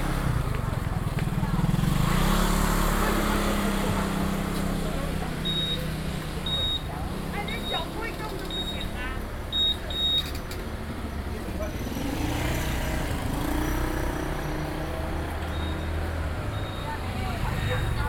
Ln., Minzu Rd., Tamsui Dist. - Traditional markets